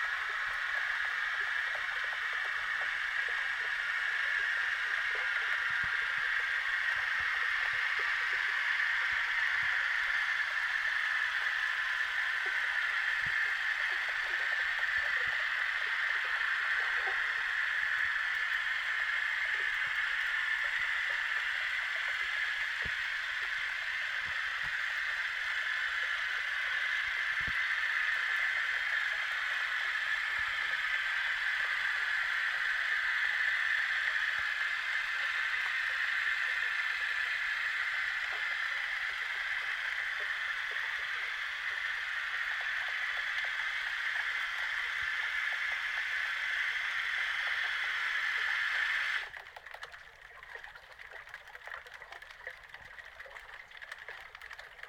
Simpson Park Lake, Valley Park, Missouri, USA - Fishing Boat Electric Motor
Underwater hydrophone recording of fishing boat starting its electric motor and passing.